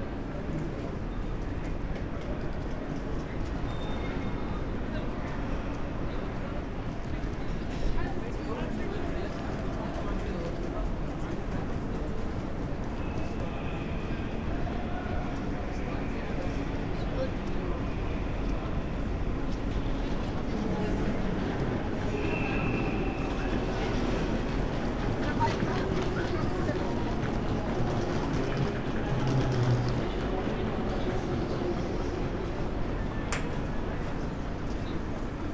Hamburg Hauptbahnhof - central station walk
Hamburg Hauptbahnhof, main station, walking from the upper level down to the platform
(Sony PCM D50, Primo EM172)
Hamburg, Germany, 26 January 2019, 19:30